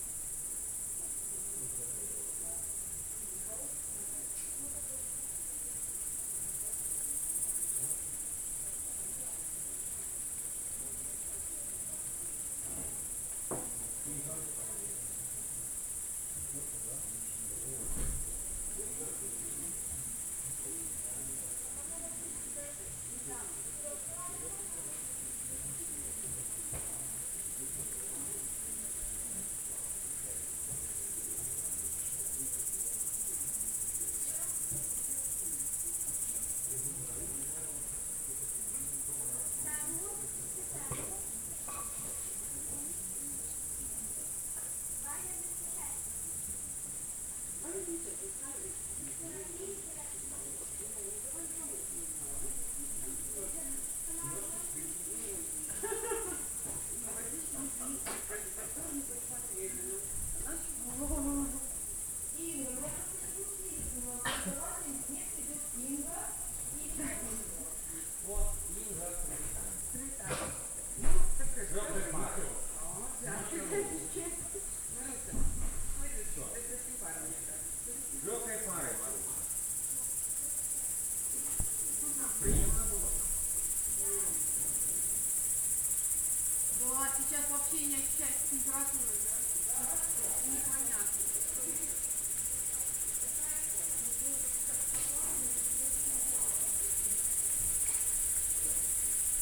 Каптаруны, Беларусь - Kaptaruni by night
people talking on the 1st floor, insects singing on the 2nd.
collection of Kaptarunian Soundscape Museum